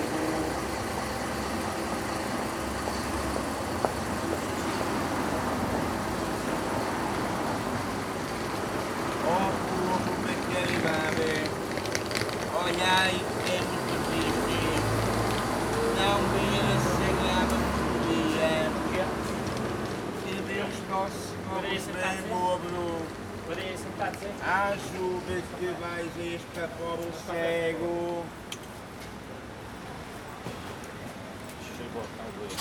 Porto, Rue Alexandro Herculano - homeless chant
a homeless man chanting in front of a cafe. buses leaving and arriving at the bus depot nearby.